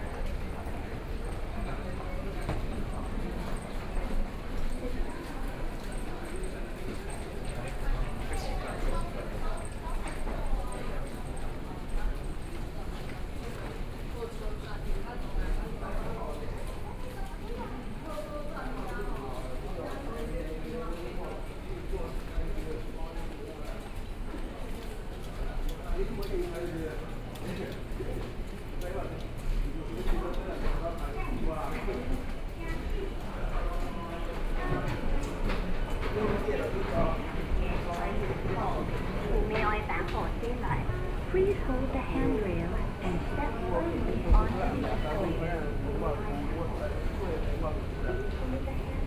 Taipei Nangang Exhibition Center Station - soundwalk
Train Ride, walking in the station, Binaural recordings, Sony PCM D50 + Soundman OKM II